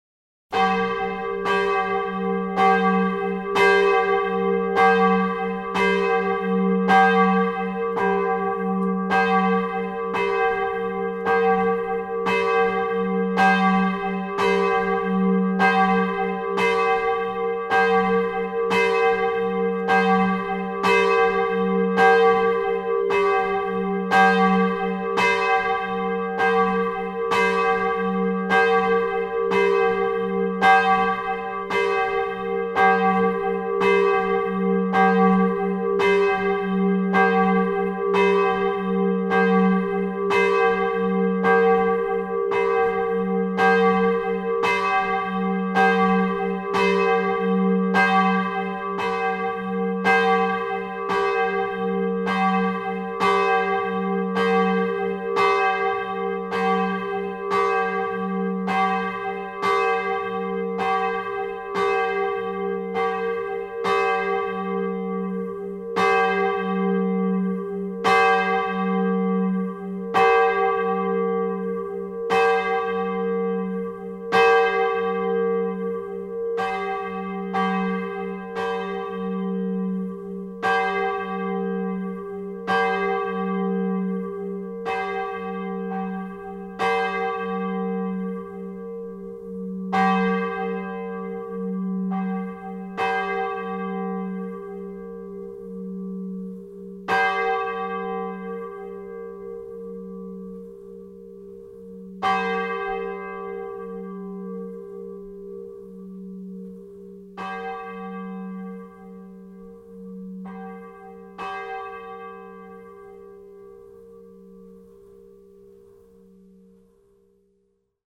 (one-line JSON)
{"title": "Gallardon, France - Gallardon bell", "date": "2010-04-08 12:01:00", "description": "The bell of the magnificent Gallardon church.", "latitude": "48.53", "longitude": "1.69", "timezone": "Europe/Paris"}